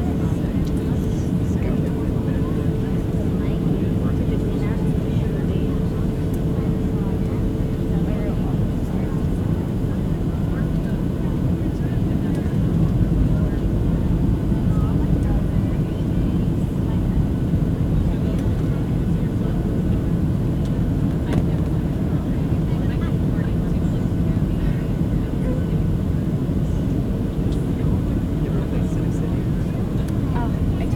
Airplane, Orlando Airport
Airplane...about to lift off from Orlando.